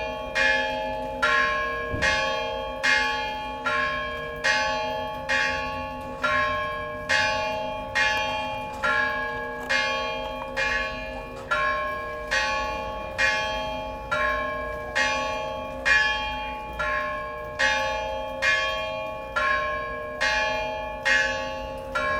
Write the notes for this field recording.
During the beginning of the Liturgy of Preparation, bells are ringing. The Deacon rings it by chiming, using ropes. Here in Gyumri, it's an extremely bad chiming. We can understand it by the fact the beautiful old bells were destroyed during the 1988 earthquake.